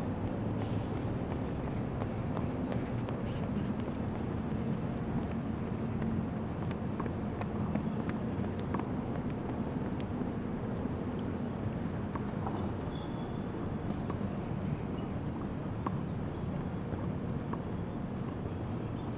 {
  "title": "Sports Field, National Taiwan University - In track and field",
  "date": "2016-03-05 15:40:00",
  "description": "In track and field, Running sound, Sound from tennis\nZoom H2n MS+XY",
  "latitude": "25.02",
  "longitude": "121.54",
  "altitude": "7",
  "timezone": "Asia/Taipei"
}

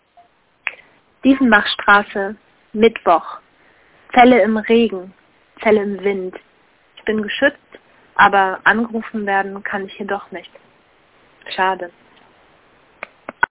{
  "title": "Telefonzelle, Dieffenbachstraße - Zelle im Wind 11.07.2007 19:17:32",
  "latitude": "52.49",
  "longitude": "13.42",
  "altitude": "42",
  "timezone": "GMT+1"
}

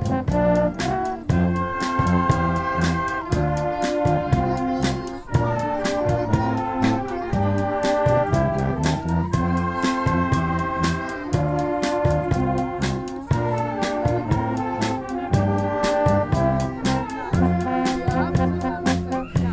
{
  "title": "rurberg, lake promenade, seasonal public ministry celebration",
  "date": "2010-06-28 12:47:00",
  "description": "at the seasonal public ministry celebration. a local brass orchestra performing and conversation of people\nsoundmap nrw - social ambiences and topographic field recordings",
  "latitude": "50.61",
  "longitude": "6.38",
  "altitude": "283",
  "timezone": "Europe/Berlin"
}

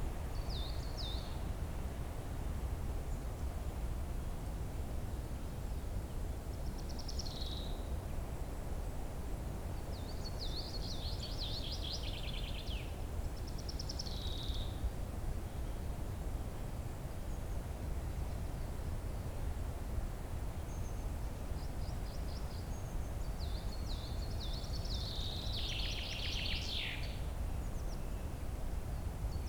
{
  "title": "Löcknitztal, Grünheide, Deutschland - forest ambience",
  "date": "2015-04-11 16:50:00",
  "description": "wind in trees, forest ambience, river Löcknitz valley, near village Klein Wall.\n(Sony PCM D50, DPA4060)",
  "latitude": "52.42",
  "longitude": "13.89",
  "altitude": "45",
  "timezone": "Europe/Berlin"
}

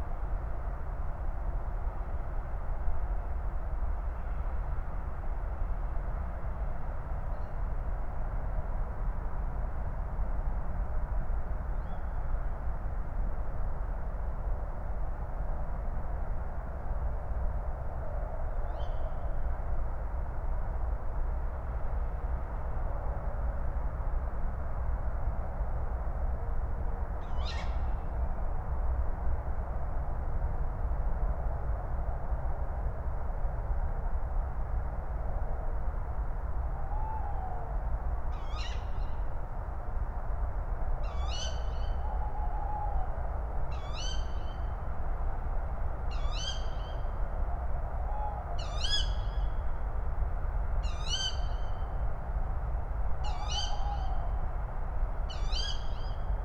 {
  "title": "Berlin, Buch, Am Sandhaus - forest edge, former Stasi hospital, traffic howl /w tawny owl",
  "date": "2021-03-03 05:30:00",
  "description": "distant traffic howl from the Autobahn ring, Tawny owls, male and female, female calls reflecting at the hospital building\n(remote microphone: AOM5024/ IQAudio/ RasPi Zero/ LTE modem)",
  "latitude": "52.64",
  "longitude": "13.48",
  "altitude": "62",
  "timezone": "Europe/Berlin"
}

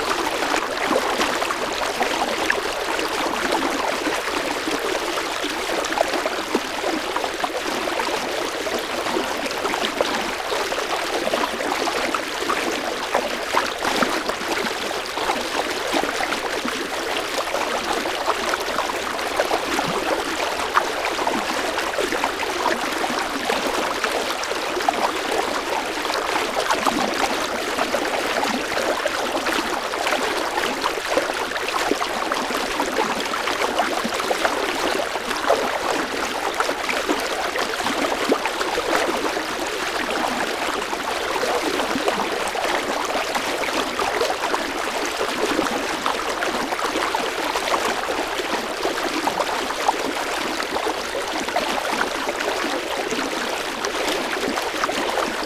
{
  "title": "river Drava, Loka, Slovenia - november gray, cristal waters",
  "date": "2015-11-29 14:54:00",
  "latitude": "46.48",
  "longitude": "15.76",
  "altitude": "233",
  "timezone": "Europe/Ljubljana"
}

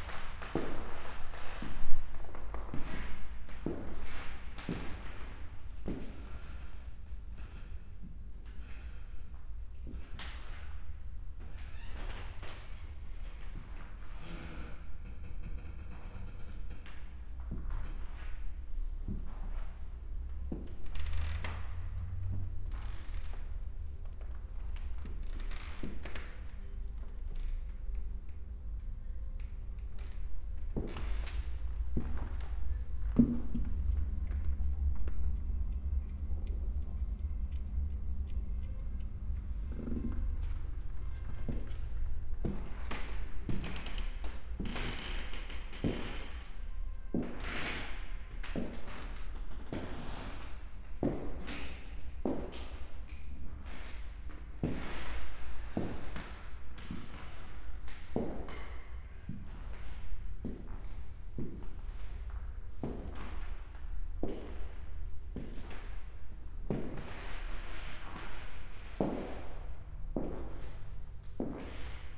{
  "title": "tivoli, MGLC, ljubljana - walk through exhibition halls, parquet",
  "date": "2014-01-10 13:59:00",
  "description": "quiet halls, old Tivoli castle",
  "latitude": "46.05",
  "longitude": "14.49",
  "altitude": "331",
  "timezone": "Europe/Ljubljana"
}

